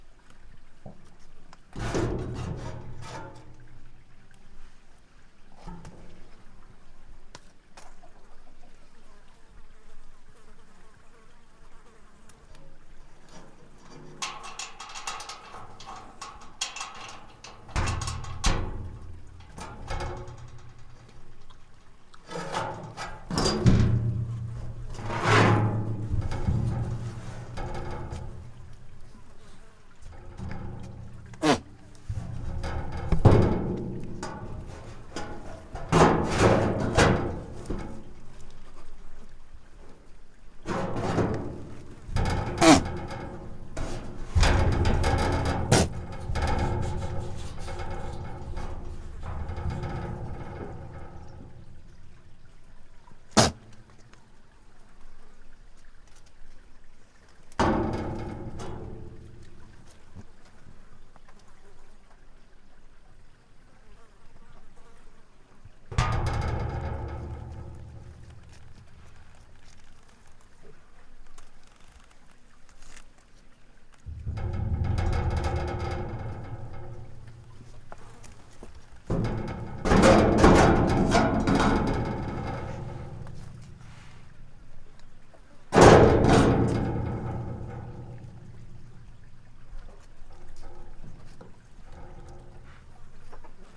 Povoa Das Leiras, Portugal, goat banging on a metal door - PovoaDasLeirasGoatDoor
Povoa Das Leiras, stable with a metal door and a goat behind, world listening day, recorded together with Ginte Zulyte.
18 July 2012